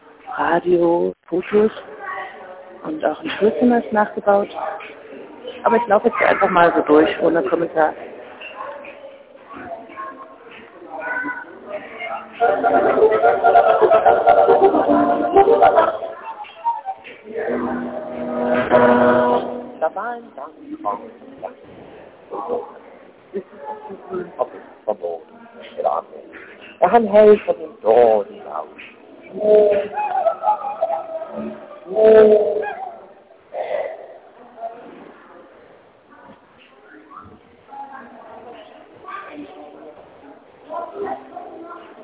Nationalmuseet, drinnen - Nationalmuseet, drinnen 2